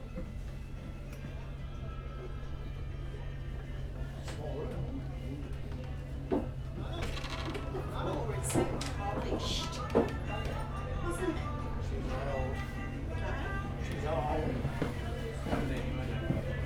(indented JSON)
{
  "title": "neoscenes: ARC Gloria captains lounge",
  "latitude": "-33.86",
  "longitude": "151.21",
  "altitude": "11",
  "timezone": "Australia/NSW"
}